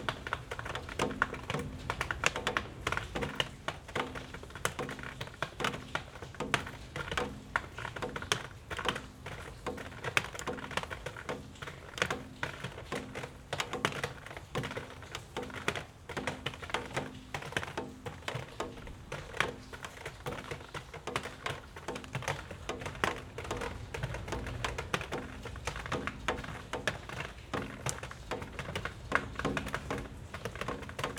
Punto Franco Nord, Trieste, Italy - rain rops percussion
Punto Franco Nord, derelict workshop building, percussive rain drops falling on a bunch of metal pieces and plastic
(SD702, AT BP4025)